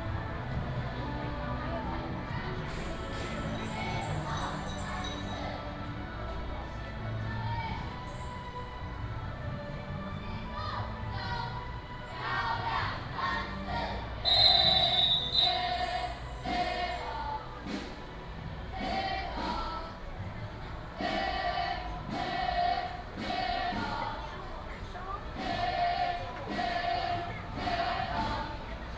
Yi 2nd Rd., Zhongzheng Dist., Keelung City - Festivals
Festivals, Walking on the road, Variety show, Keelung Mid.Summer Ghost Festival, Elementary school students show, Female high school music performers instrument